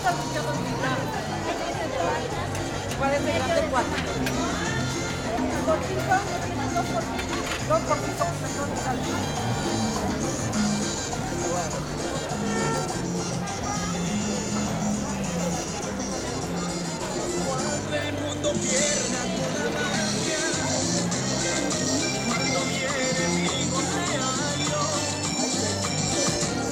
de Mayo, Cochabamba, Bolivia - Mercado 25 de Mayo, Cochabamba
A 4 channel recording mixed down to stereo, front mic was an Audio-Technica 4029 mid side mic, rear mics were 2x Rode Lavaliers, recorded onto a SD 664.